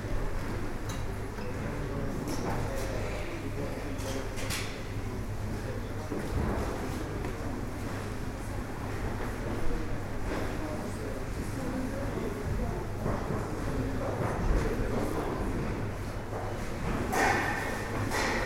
район Прокопьевский, Кемеровская область, Россия - Aeroport in Novokuznetsk
Announcement in aeroport in Novokuznetsk (Western Siberia)
Кемеровская область, Сибирский федеральный округ, Российская Федерация